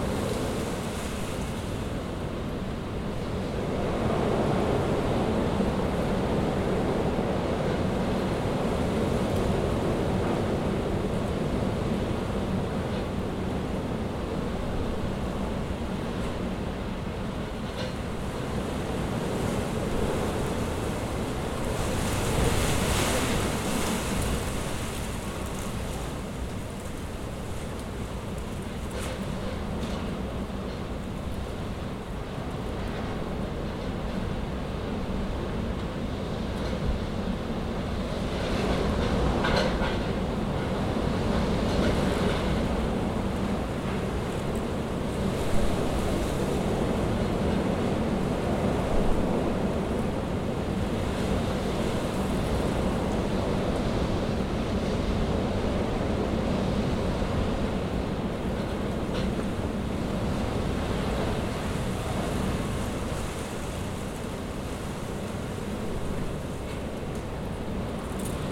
Alpha Court, Raglan St, London, UK - Storm Eunice-London-18th February 2022
Strong gusts of wind interspersed with moments of calm as Storm Eunice passes over Kentish Town. Recorded with a Zoom H4-n